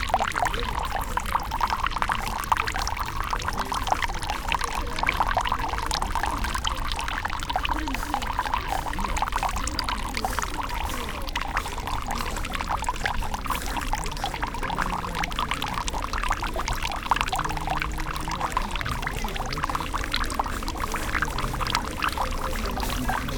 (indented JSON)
{
  "title": "chōzubachi, Ryoanji, Kyoto - bamboo tube, flux",
  "date": "2014-11-06 13:46:00",
  "latitude": "35.03",
  "longitude": "135.72",
  "altitude": "98",
  "timezone": "Asia/Tokyo"
}